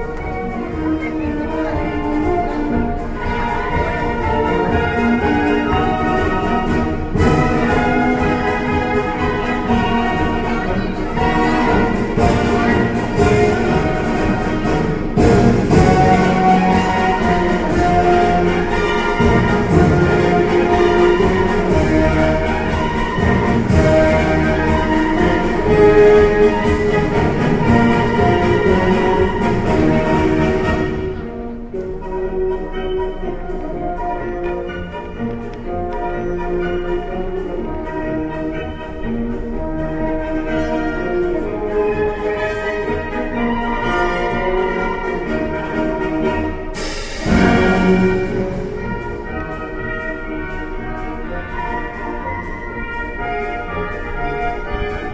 {"title": "Abreise des M.S. Alexander Newski", "latitude": "46.35", "longitude": "48.04", "altitude": "-15", "timezone": "Europe/Berlin"}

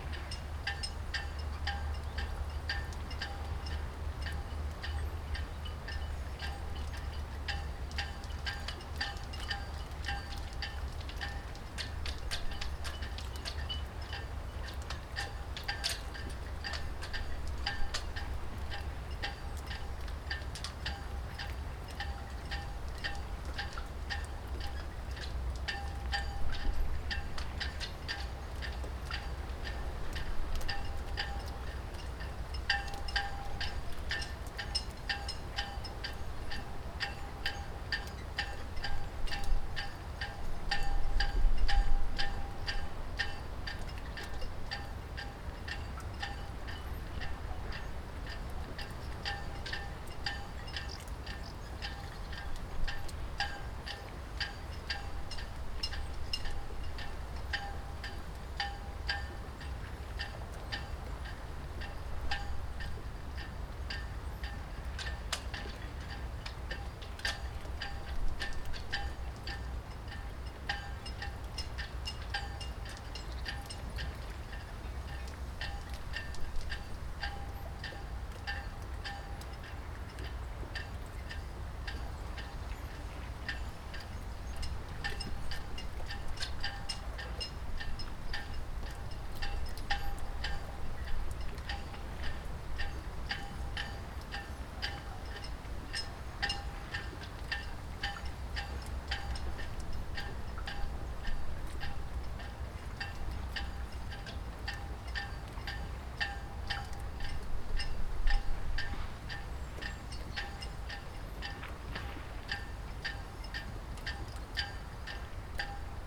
{"title": "small marina Rohel, Oldeouwer, Nederland - wind and boats", "date": "2012-05-12 11:34:00", "description": "small marina at the shore oif Lake Tjeuke, largest lake in Fryslan (except Ijsselmeer ofcourse), where the wind is blowing through the cables. Recorded with Zoom4", "latitude": "52.91", "longitude": "5.81", "timezone": "Europe/Amsterdam"}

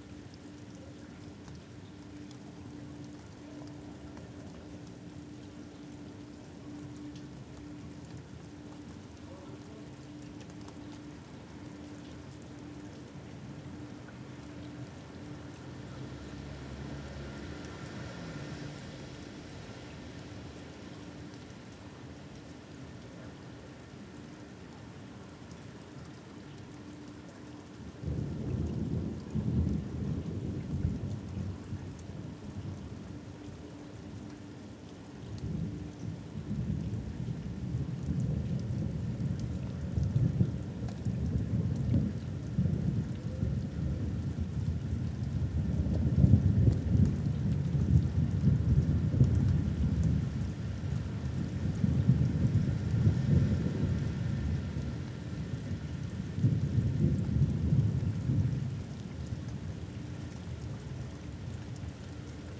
{"title": "Thunderstorm over Katesgrove, Reading, UK - The mid-section of an immense thunderstorm", "date": "2014-06-14", "description": "We had been having an intense family discussion, and the mood in the house was a little oppressive. Suddenly the sense that a mighty storm was breaking outside replaced the heavy mood with one of excitement. Forgetting all about whatever we had been discussing, we ran to the door and stood in the doorway watching fork lightning driving down through the dark sky, and listening to thunder rumble overhead. It was incredibly loud and bright, and I had the sense that the whole sky was cracking. When it first began it was very explosive and loud, but my batteries were dead and the only way to create recordings was by plugging the recorder into the mains, which didn't feel like such a great option, but how could I miss the opportunity to record this amazing storm? I strapped my Naint X-X microphones onto the latch of the opened window and plugged them into the FOSTEX FR-2LE. Then I lay on the floor in the dark while everyone else slept, wondering when the storm would die down.", "latitude": "51.44", "longitude": "-0.97", "altitude": "53", "timezone": "Europe/London"}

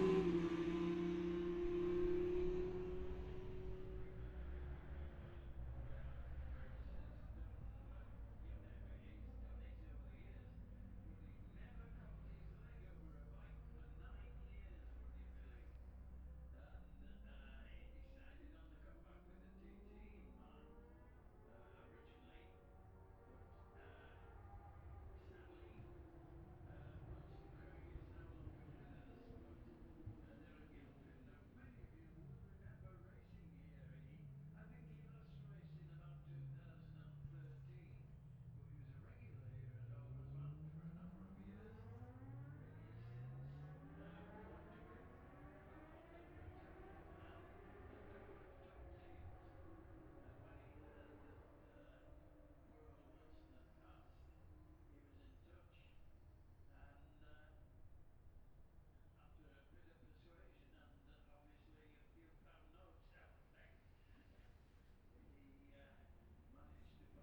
{"title": "Jacksons Ln, Scarborough, UK - olivers mount road racing ... 2021 ...", "date": "2021-05-22 09:15:00", "description": "bob smith spring cup ... newcomers ... luhd pm-01 mics to zoom h5 ...", "latitude": "54.27", "longitude": "-0.41", "altitude": "144", "timezone": "Europe/London"}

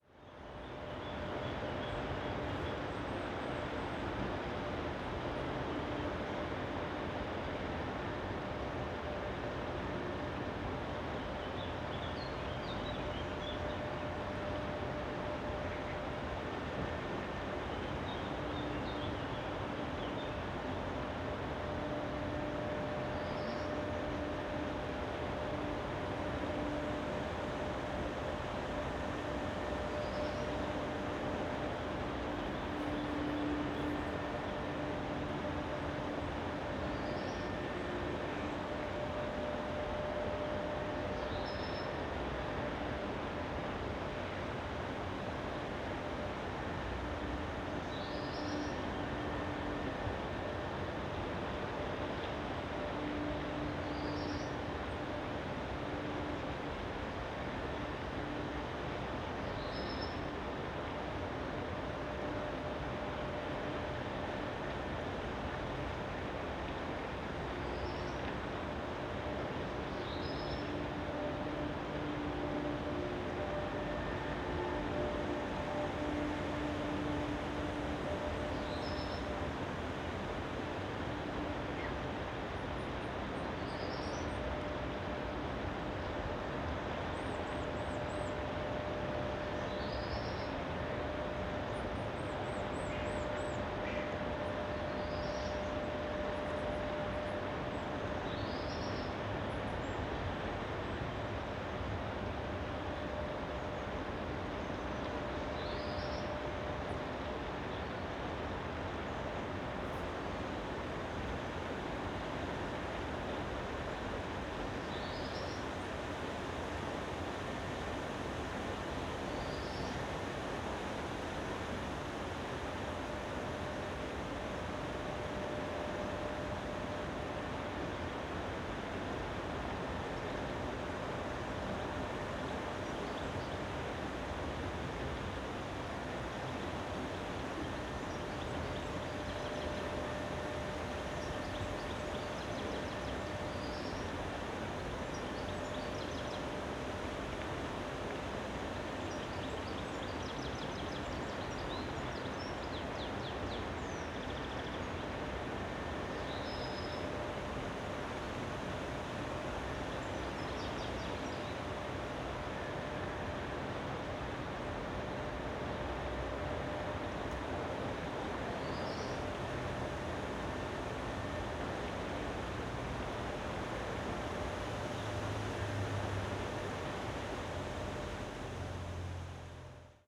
{"title": "Maribor, near Meljska Cesta - river Drava, dam", "date": "2012-05-27 10:35:00", "description": "sound of the river Drava and hum of the opposite river dam. the place is currently a construction site for a new road.\n(tech: SD702, AT BP4025)", "latitude": "46.56", "longitude": "15.68", "altitude": "252", "timezone": "Europe/Ljubljana"}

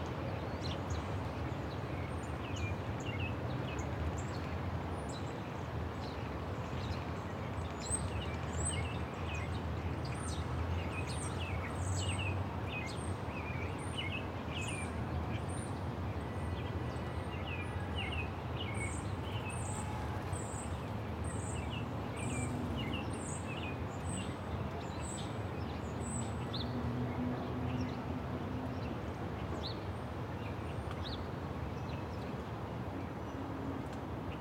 {"title": "Grandview Ave, Ridgewood, NY, USA - Birds and Squirrels", "date": "2022-03-17 15:05:00", "description": "Sounds of birds and a squirrel hopping around the microphone hiding nuts.\nRecorded at Grover Cleveland Playground in Ridgewood, Queens.", "latitude": "40.71", "longitude": "-73.91", "altitude": "31", "timezone": "America/New_York"}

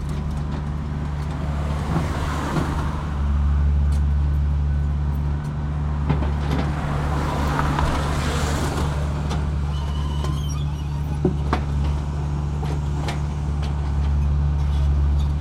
{"title": "Massy, Avenue Raymond Aron, Nouvelle Gare RER C", "date": "2010-09-22 11:16:00", "latitude": "48.73", "longitude": "2.26", "altitude": "82", "timezone": "Europe/Paris"}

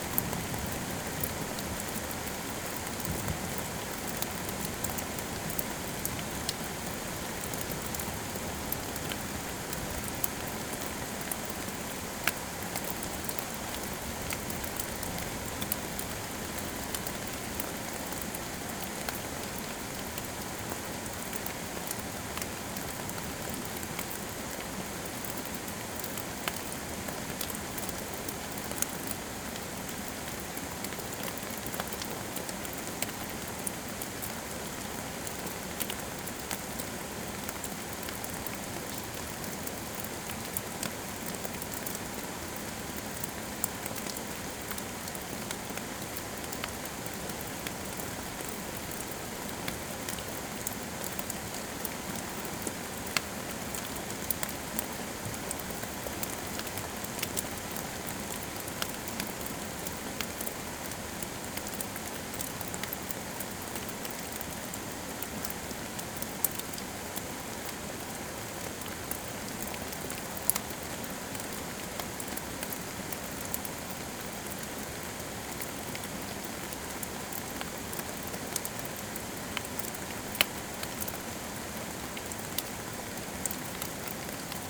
{"title": "Montagnole, France - Storm", "date": "2017-06-06 12:35:00", "description": "Recording of a small storm, with recorder placed in the very small hole of the entrance. We heard some deaf sounds, perhaps four or five, and thought : wow, it's seriously collapsing now somewhere in the underground mine. But, it was only thunder sounds reverberating in the tunnels. Just after the recording, a dam broke and an entiere river collapsed into the underground mine. It was terrific ! That's why on the spelunking map we had, there's a lake mentioned. No mystery, it's arriving each storm.", "latitude": "45.53", "longitude": "5.92", "altitude": "546", "timezone": "Europe/Paris"}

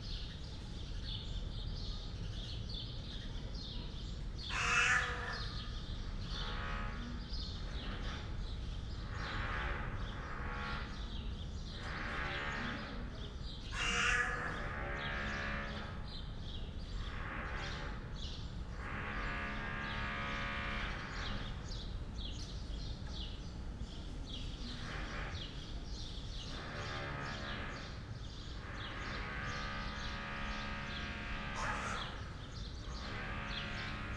{"title": "Berlin Bürknerstr., backyard window - crow vs. drillhammer", "date": "2017-06-13 11:30:00", "description": "got curious about an unusual sound of a dun crow, it was seemingly trying to imitate or answer to a drill hammer in the neighbourhood. fail in the end...\n(Sony PCM D50, Primo EM172)", "latitude": "52.49", "longitude": "13.42", "altitude": "45", "timezone": "GMT+1"}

